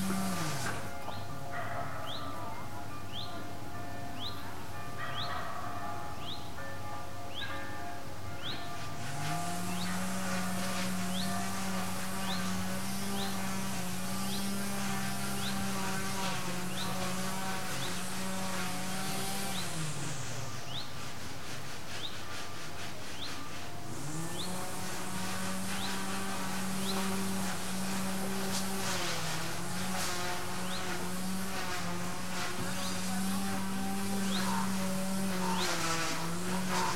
Sasino, summerhouse at Malinowa Road, backyard - breakfast
breakfast time. typical soundscape for this area during the day. the lawnmowers roar from seven in the morning until sunset, electric and manual saws cut various objects continuously, the ground trembles due to hammer hits and drill howl. upgrading and finishing works never seem to be completed around here. we think it's a holiday resort for obsessive handyman who never take a break.